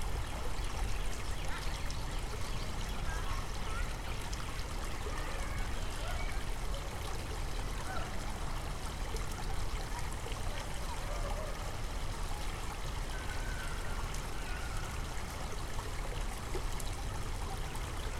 Peachtree Dunwoody Rd, Atlanta, GA, USA - Little Nancy Creek
A recording made along Little Nancy Creek. The trickling of water is the predominant sound, but children in the background are still quite audible. There are birds and other environmental sounds interspersed throughout the recording. A dried leaf can be heard rustling in close proximity to the left microphone at the end of the recording. This recording was made using the "tree ears" strategy, whereby the microphones were mounted on each side of a medium-sized tree. The result is a large stereo separation.
[Tascam Dr-100mkiii & Primo EM272 omni mics)